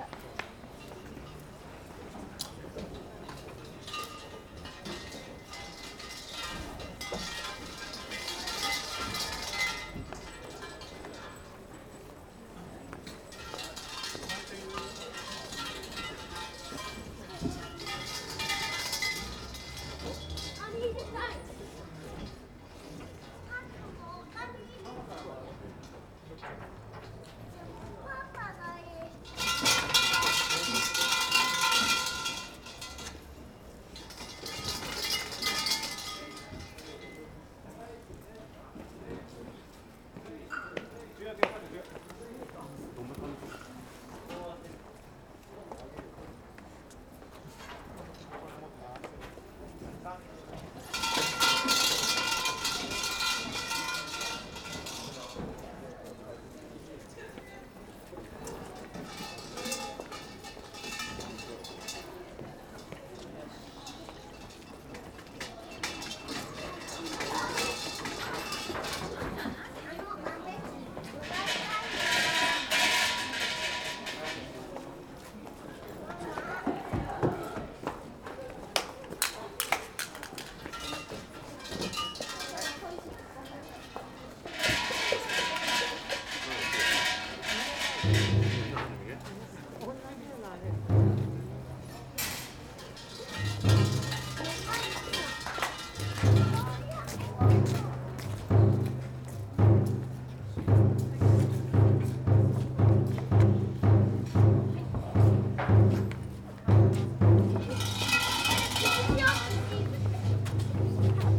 Jōnai, Kokurakita Ward, Kitakyushu, Fukuoka, Japan - New Year's Day Prayers Before Yasaka Shrine
New Year's Day Prayers Before Yasaka Shrine
福岡県, 日本, 1 January